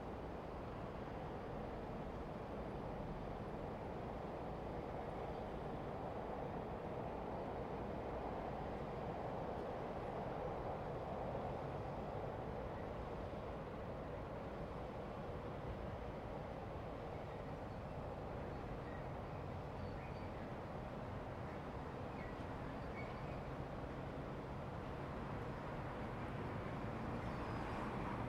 Ōsaki, Shinagawa-ku, Tōkyō-to, Japonia - Roadworks
Roadworks near the Oosaki station.
Tōkyō-to, Japan, February 2015